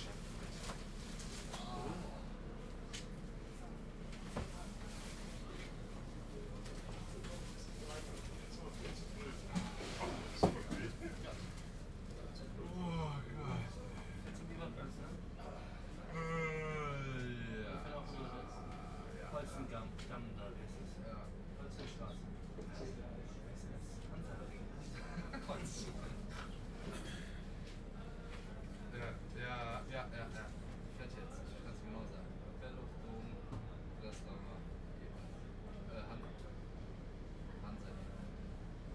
Altstadt-Süd, Köln, Deutschland - Wir haben genug gestanden heute
Riding home in the tram in the evening after a visit to the pub with colleagues.
Cologne, Germany, 1 March